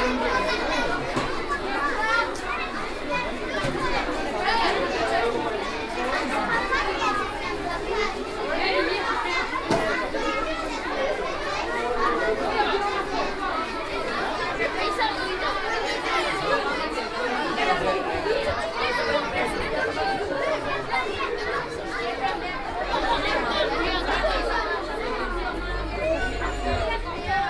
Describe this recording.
Schaerbeek, Rue de lAgriculture, Groupe scolaire Georges Primo. Children and parents, bring the noise!